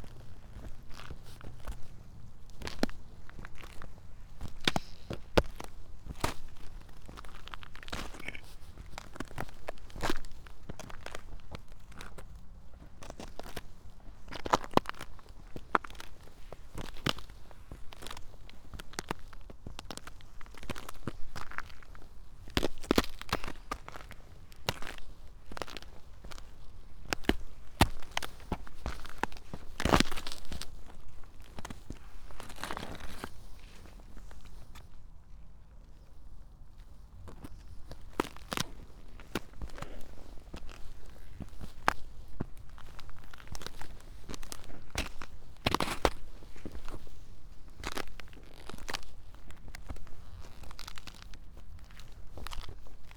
path of seasons, meadow, piramida - frozen snow, paper
winter, snow, ice, trees breaking, train ...
Maribor, Slovenia, 3 February 2014, ~17:00